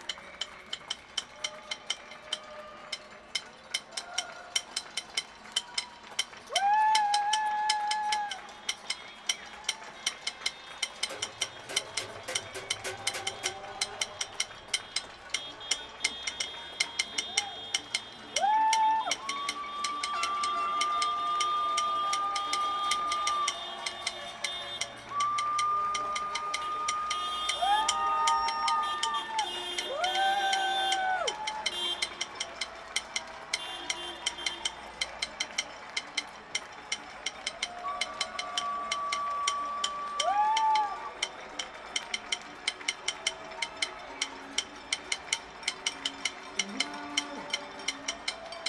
Burnaby Street, Vancouver, BC, Canada - West End Gratitude Soundscape recorded by SoundSeeds
This is the neighbours from the West End showing gratitude at 7 p.m. for the health workers and people in the front lines around the world. Recorded from the 6th floor of my balcony.
Metro Vancouver Regional District, British Columbia, Canada, 30 March 2020